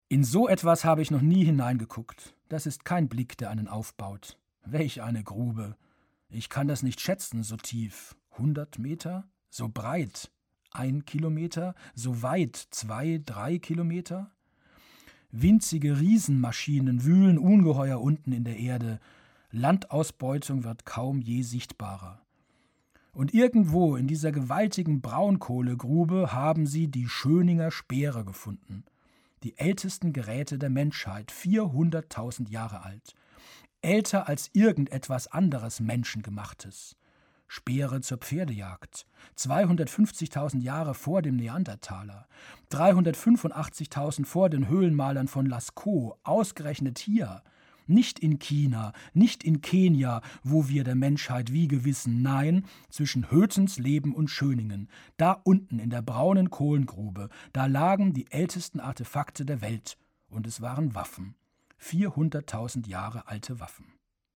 bei hoetensleben - braunkohlegruben
Produktion: Deutschlandradio Kultur/Norddeutscher Rundfunk 2009